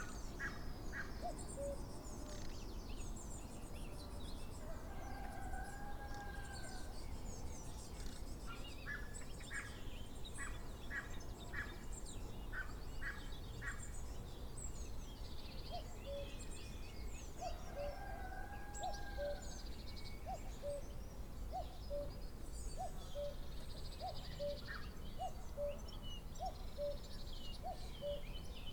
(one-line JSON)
{"title": "Warbleton, UK - Cuckoo Calling", "date": "2017-05-25 06:45:00", "description": "Early morning Cuckoo calling. Recorded on Tascam DR-05 internal microphones with wind muff.", "latitude": "50.95", "longitude": "0.31", "altitude": "109", "timezone": "Europe/London"}